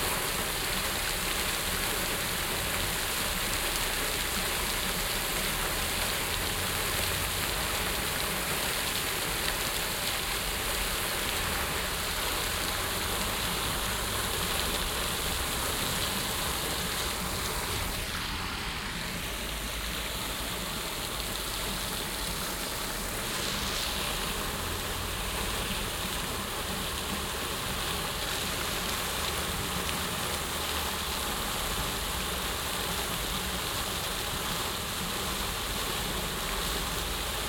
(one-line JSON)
{"title": "haan, neuer markt, stadtbrunnen", "description": "wassergeräusche des intervallgesteuerten stadtbrunnens, leichte wind und verkehrsgeräusche\n- soundmap nrw\nproject: social ambiences/ listen to the people - in & outdoor nearfield recordings", "latitude": "51.19", "longitude": "7.01", "altitude": "161", "timezone": "GMT+1"}